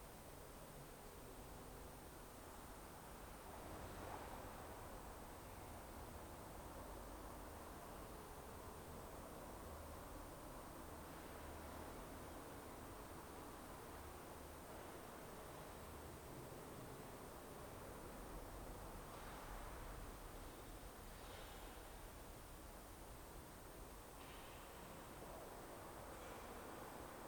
Faubourg-Montmartre, Paris, France - Sainte Cécile - Paris un 8 mai, une ville sans voiture
H4n + AKG C214